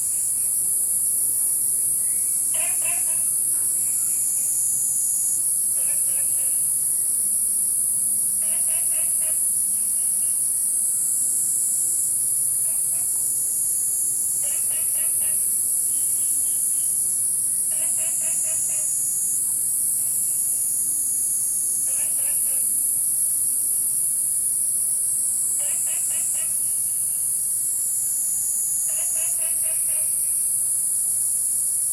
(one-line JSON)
{"title": "青蛙阿婆家, Taomi Ln., Puli Township - Insects called", "date": "2015-08-11 05:26:00", "description": "Frogs chirping, Insects called, Small ecological pool, Dogs barking\nZoom H2n MS+XY", "latitude": "23.94", "longitude": "120.94", "altitude": "463", "timezone": "Asia/Taipei"}